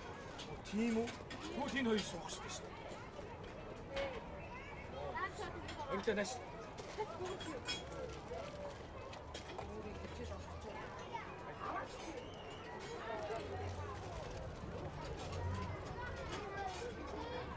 Khoroo, Ulaanbaatar, Mongolei - chain carousel
nothing to add, they take place it starts, stops, the children go away - recorded in stereo with a sony microphone